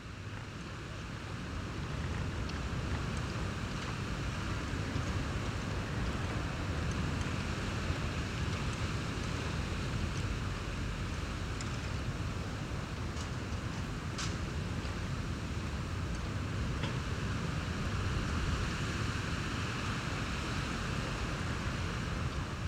Aglonas basilica, Latvia, wind
strong winds in Aglonas basilica place. mics hidden under the shrubs